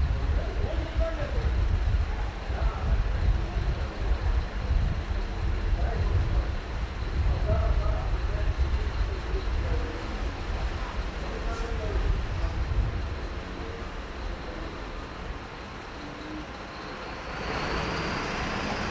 kolpingstraße, Mannheim - Kasimir Malewitsch walk eight red rectangles
gymnastics mother child, emergency
Mannheim, Germany, 31 July 2017, ~15:00